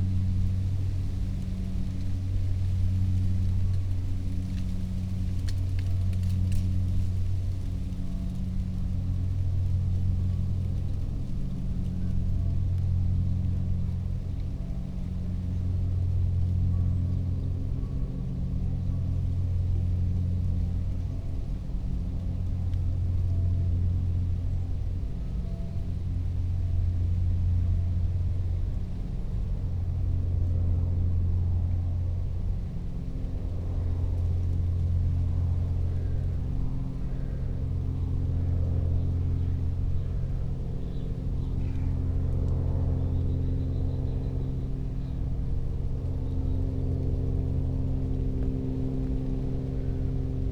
Tempelhofer Feld, Berlin, Deutschland - helicopter, drone, light wind
early autumn Sunday moning, hoping for gentle sounds of wind at my favourite place. But a marathon is going on nearby, helicopters flying around. However, when they move away, it creates heavy resonant pattern at low frequencies. Wind, leaves, crows, churchbells. Recording amplified.
(SD702, MKH8020)